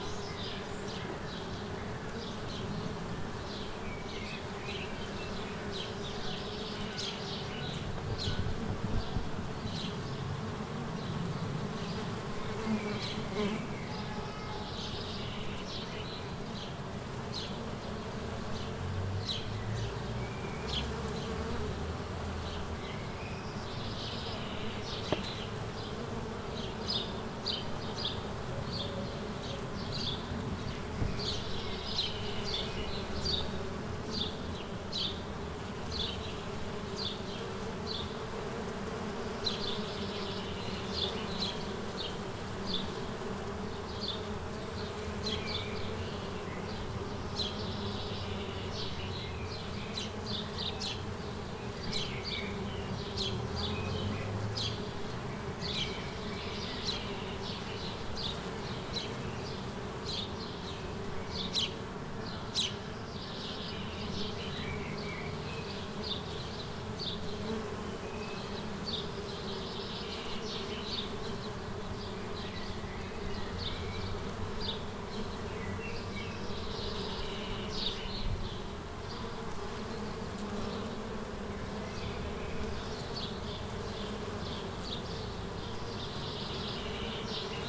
Bd Pierpont Morgan, Aix-les-Bains, France - L'olivier
Abeilles butinant sur un olivier, merles .....